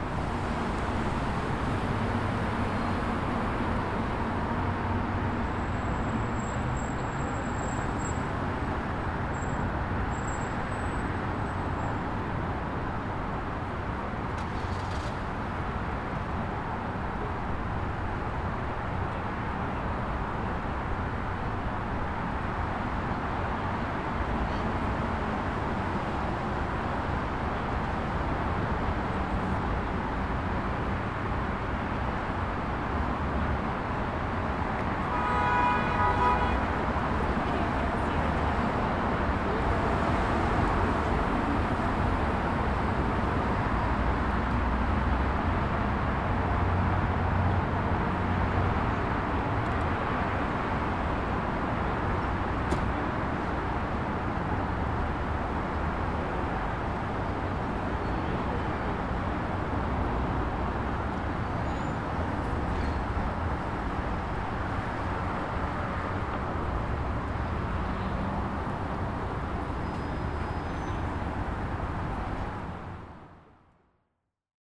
Mid-Town Belvedere, Baltimore, MD, USA - Penn Station Male/Female statue at night
In front of the Penn Station, at the Male/Female statue.
8pm on Sunday, not many people, not a busy timing, very peaceful.
Using a TASCAM DR-40.
25 September